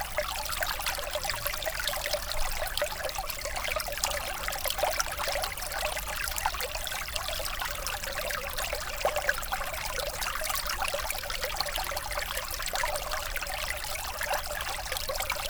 Mont-Saint-Guibert, Belgique - Ornoy stream
The Ornoy stream, a very small river coming from the fields.
Mont-Saint-Guibert, Belgium